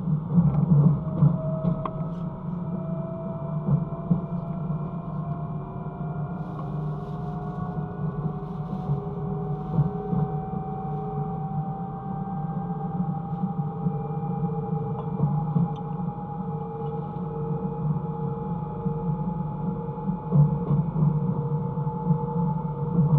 Plaisance, Paris, France - Train from Paris
A quite experimental sound, but I was wishing to do it just one time. This is a train going out from Paris, and recorded with contact microphones sticked on the train metallic structure. Switches are numerous here, that's why I recorded this train.